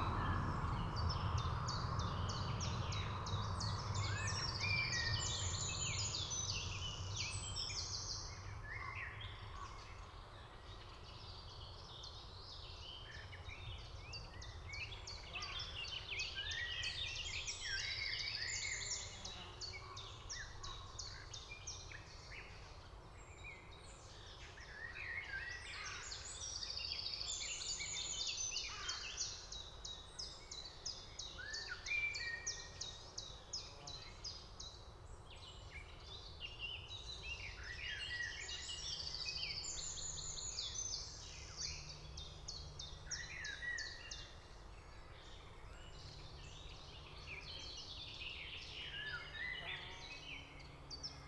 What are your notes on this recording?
Recorded in a lovely mature wood at the bottom of a valley forming a natural 'amphitheatre' with a small road running left to right behind the mic array. Wrens, Garden Warblers, Blackcaps, Chiffchaffs, flies, aeroplanes, cars, Raven, Chaffinch, Song Thrush, Blackbird, sheep, more flies, Carrion Crows all with a slight echo due to the geography.Sony M10 with custom made set-up of Primo capsules.